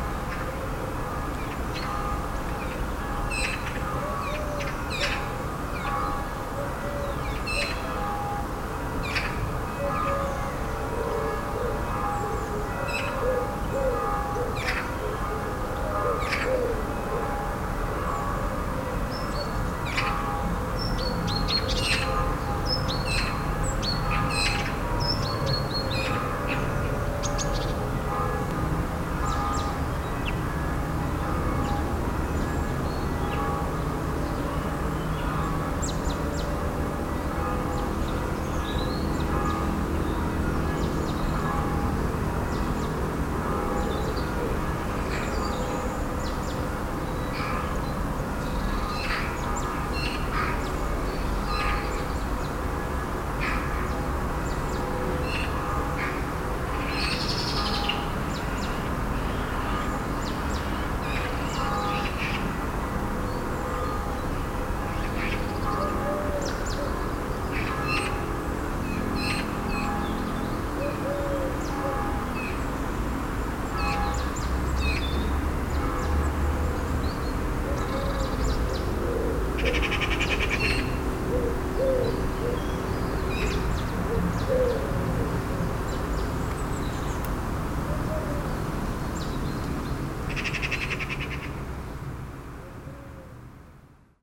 Bells are ringing and birds are singing. General ambience of this semi-rural place.